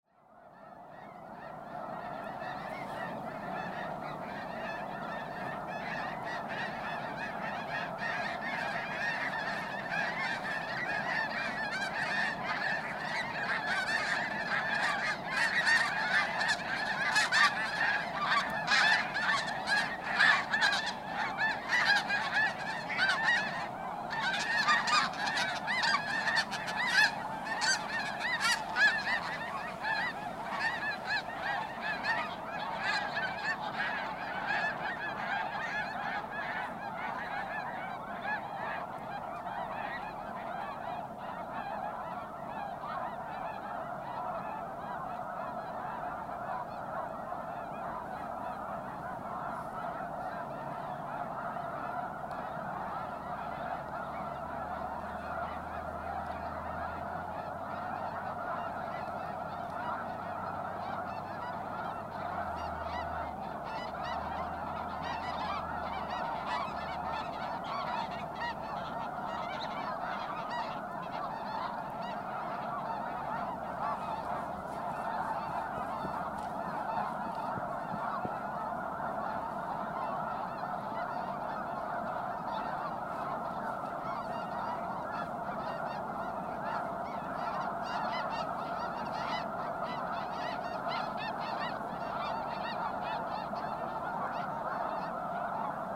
Bosque Del Apache Wildlife Refuge, New Mexico - Sandhill Cranes and Geese at Bosque Del Apache Refuge in New Mexico
Wildlife refuge with 1000's of sandhill cranes and geese stopping by these ponds during their winter migrations. Recorded on a Zoom handheld.
New Mexico, United States of America, 20 January 2019